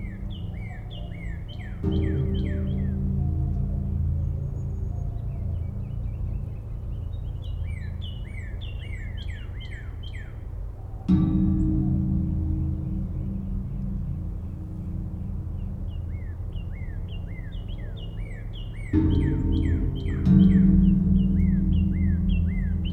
walking bridge gong with cardinals, Austin TX
playing the railings of a metal walking bridge. cardinals sing above.
25 March 2010, 06:56